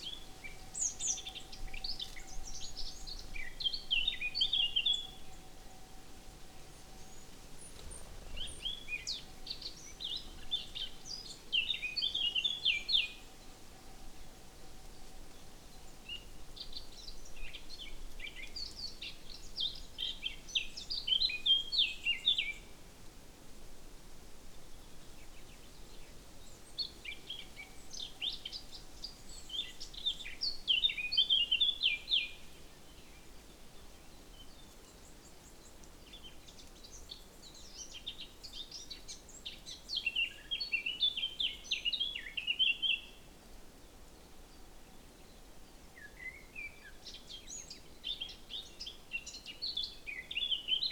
Čadrg, Tolmin, Slovenia - Near source of river Tolminka
Birds and cow bell in the distance.
Lom Uši Pro. MixPre II
Slovenija